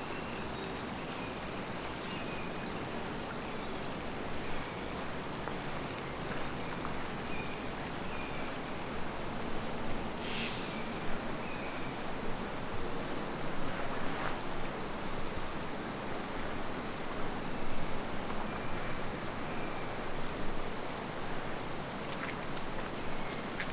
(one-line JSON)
{"title": "Steinbachtal crossing 2 bridges, WLD", "date": "2011-07-18 11:35:00", "description": "Steinbachtal, walking slowly through the dell, crossing 2 small wooden bridges over the brook, WLD", "latitude": "51.39", "longitude": "9.63", "altitude": "232", "timezone": "Europe/Berlin"}